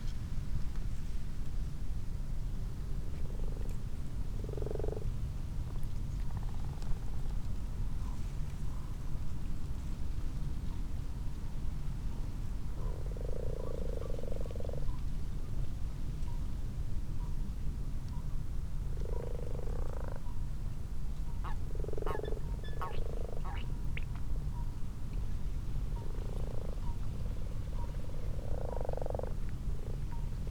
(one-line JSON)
{"title": "Malton, UK - frogs and toads ...", "date": "2022-03-12 21:52:00", "description": "common frogs and common toads ... xlr sass to zoom h5 ... time edited unattended extended recording ...", "latitude": "54.12", "longitude": "-0.54", "altitude": "77", "timezone": "Europe/London"}